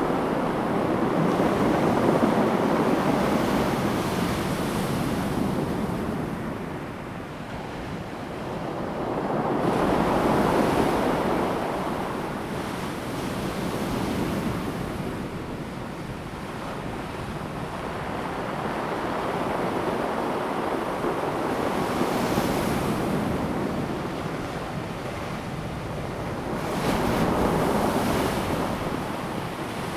Nantian Coast, 台東縣達仁鄉 - sound of the waves
Waves, Rolling stones
Zoom H2n MS+XY
Taitung County, Daren Township, 台26線, 23 April 2018